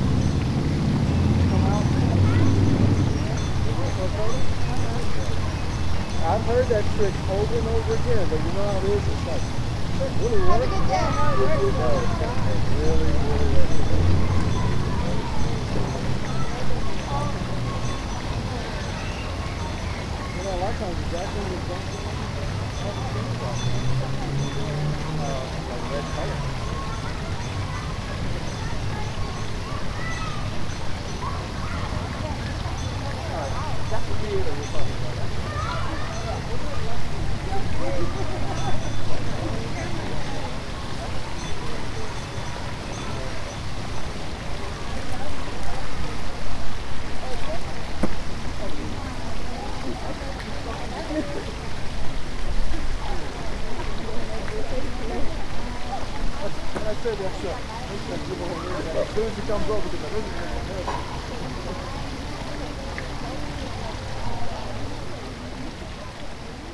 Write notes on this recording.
A recording done at a small park in the middle of Marietta Square. People were out and about due to the sunshine and unseasonably warm weather, and a group of people were in the center of the park for some kind of gathering. There's a fountain at the very center of the park, and the entire area is surrounded by roads. There's also a children's play area to the right of the recorder. Multiple people walked by and inspected my recording rig, but thankfully nobody disturbed it or asked me what it was while it was on. Recorded with a Tascam dr-100mkiii and a windmuff.